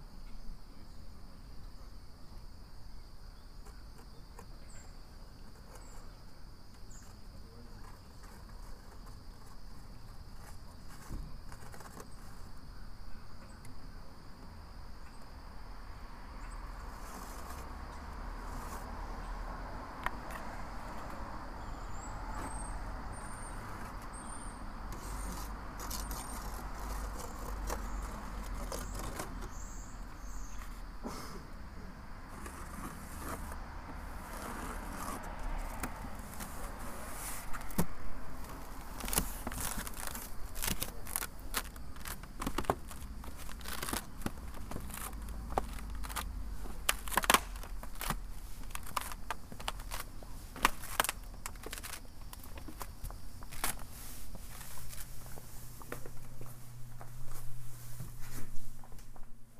Albert St, Kingston, ON, Canada - Squirrels On My Roof
I saw a squirrel on the roof of my house so I went out to capture some of the ambience that the squirrel may hear while sitting there. I climbed out of my window onto the roof and recorded some audio.
Ontario, Canada, 18 September 2021